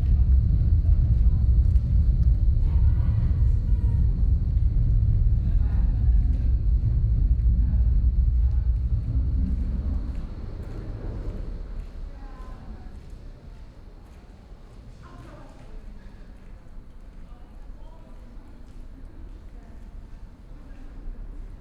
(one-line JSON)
{"title": "Bösebrücke, Bornholmer Str., Berlin, Deutschland - under bridge, ambience", "date": "2022-08-24 20:50:00", "description": "Bornholmer Str., Berlin, ambience under bridge, trains, bikes, pedestrians\n(Tascam DR100MK3, DPA4060)", "latitude": "52.55", "longitude": "13.40", "altitude": "49", "timezone": "Europe/Berlin"}